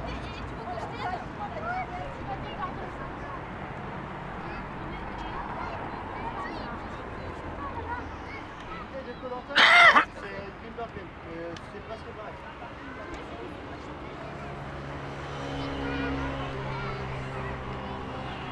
Villers-sur-Mer, France - Greenwich
Ambiant on the beach (children taping on metal ramp, and noisy motor bike passing) at Greenwich Meridian, Villers-sur-mer, Normandy, France, Zoom H6